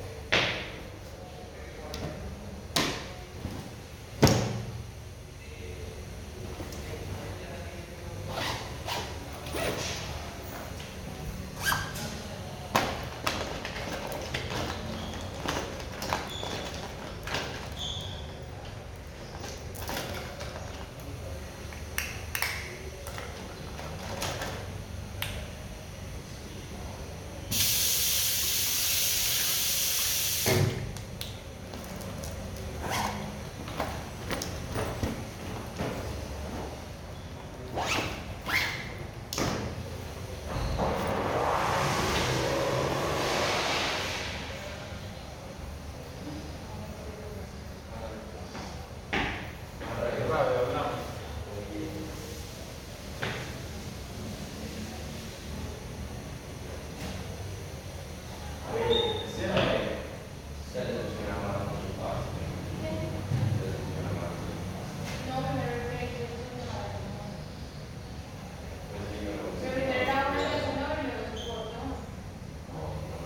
Cra., Medellín, Antioquia, Colombia - Ambiente Baño UdeM
Nombre: Ambiente baño UdeM
Hora: 11:00 am
Coordenadas: 6°13'55.5"N 75°36'45.0"W
Dirección: Cra. 89 ##30d-16, Medellín, Antioquia UdeM Bloque 10
Descripción: Sonido de un baño de la universidad de medellin de la facultad de comunicaciones
Sonido tónico: Se escucha constantemente sonidos de agua pueden venir de la canilla o de los inodoros, puertas y personas caminando
Sonido sonoro: Se escucha aveces como cierres de bolsos, secandose las manos.
Tiempo: 3:00 min
Grabado por Stiven Lopez Villa, Juan José González, Isabel Mendoza, Manuela Gallego
Antioquia, Región Andina, Colombia, September 2021